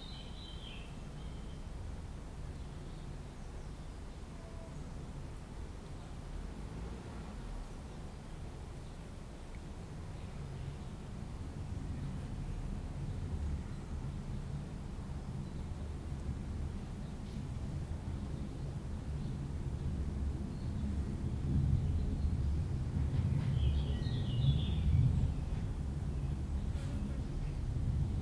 Lord's Bushes. Epping Forest
Birds, insects and various aircraft. Recorded on a Zoom H2n.